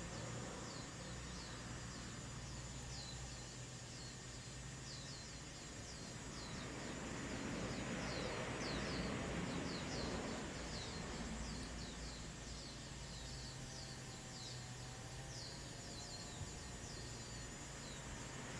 鹽寮村, Shoufeng Township - Old seating area
Old seating area, Traffic Sound, Small village
Zoom H2n MS+XY